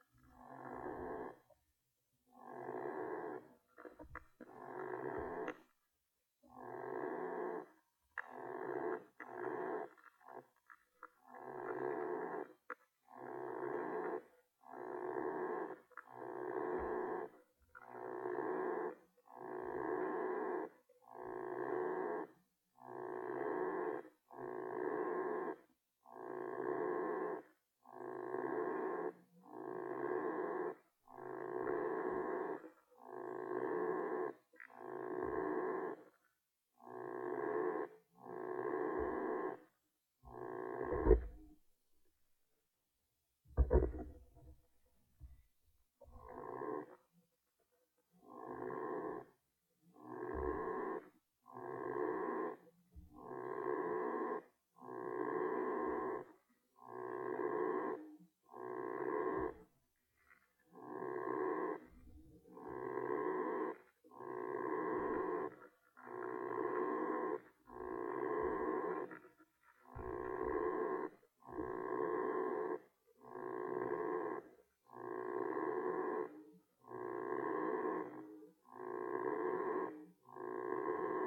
Utena, Lithuania, underwater creature
some underwater creature recorded with hydrophone
10 August 2013